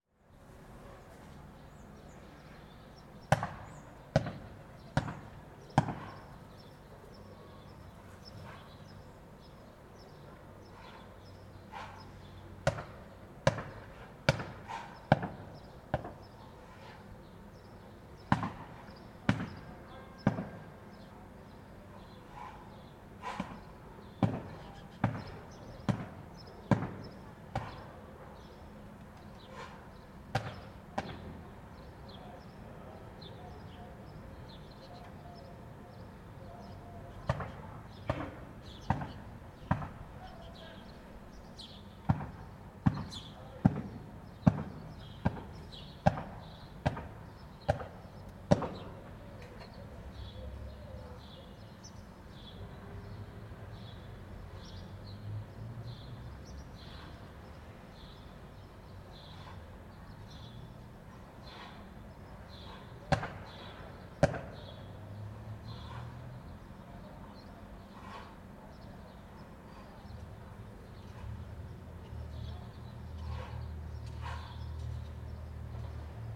Strada Gorăslău, Sibiu, Romania - Spring cleaning
In the courtyard outside the buildings, a man is beating the dust out of a rug.